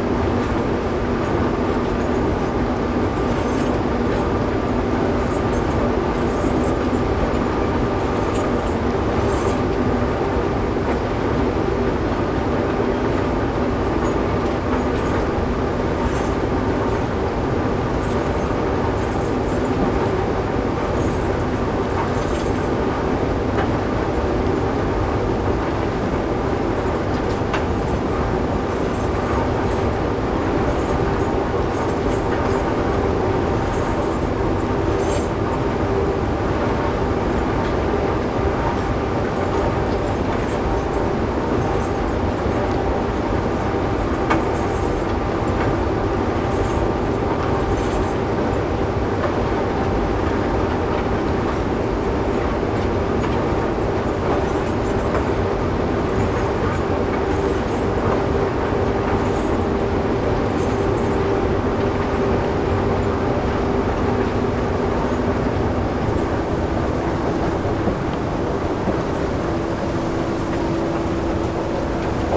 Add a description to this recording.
Opencast mining is done by monstrously large machines. The cutting edge is a huge wheel of buckets that gouges the coal seams in circular sweeps. The coal is immediately carried to storage mountains and the railheads on conveyer belts sometimes kilometers long. I am surprised how relatively quiet these machines are given their size.